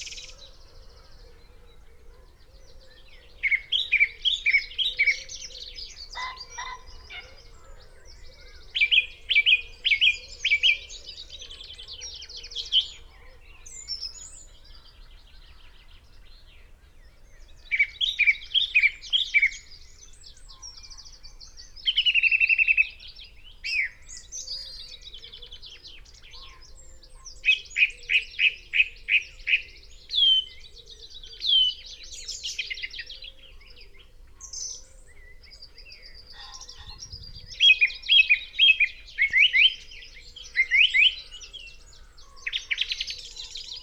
Green Ln, Malton, UK - song thrush ... mainly ..
song thrush ... mainly ... xlr mics in a SASS to Zoom H5 ... SASS wedged into the crook of a tree ... bird calls ... song ... from ... pheasant ... buzzard ... crow ... wren ... wood pigeon ... red-legged partridge ... dunnock ... blackcap ... chaffinch ... linnet ... willow warbler ... long-tailed tit ... blue tit ... some background noise ... and a voice ...